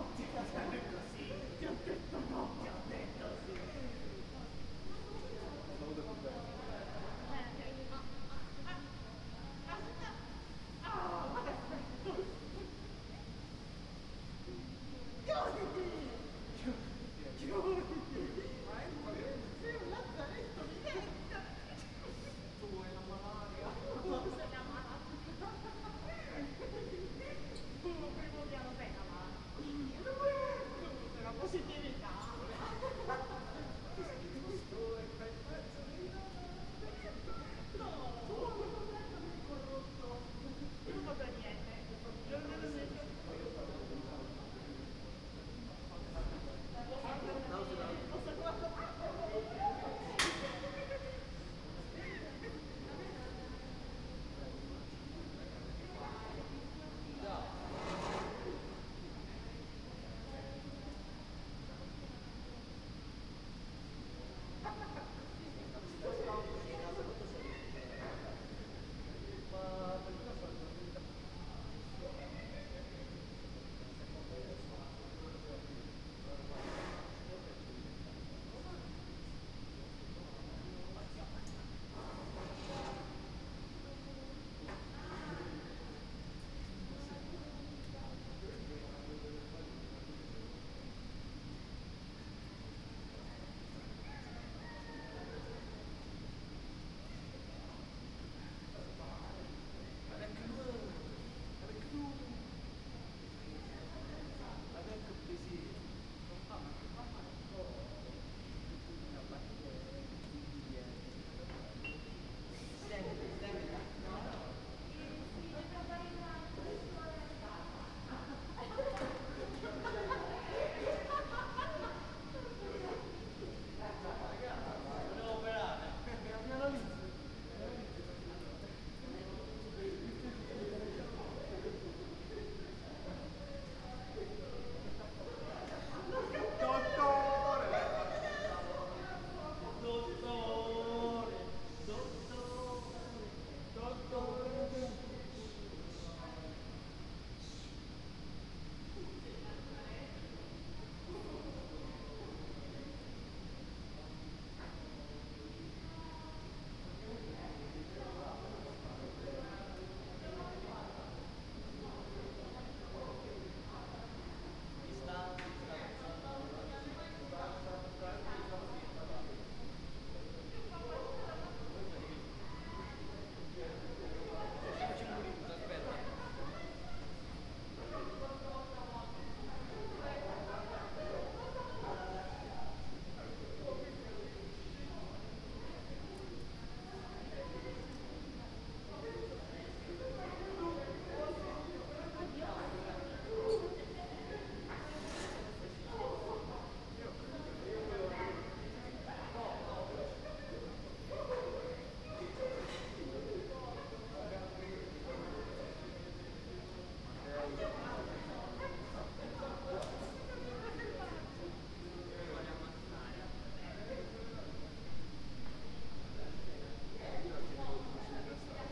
Ascolto il tuo cuore, città, I listen to your heart, city. Several chapters **SCROLL DOWN FOR ALL RECORDINGS** - Early Saturday night with laughing students in the time of COVID19 Soundscape
"Early Saturday night with laughing students in the time of COVID19" Soundscape
Chapter CXXXVIII of Ascolto il tuo cuore, città. I listen to your heart, city
Saturday, October 31st 2020. Fixed position on an internal terrace at San Salvario district Turin.
Start at 00:37 a.m. end at 00:53 a.m. duration of recording 16’00”
Torino, Piemonte, Italia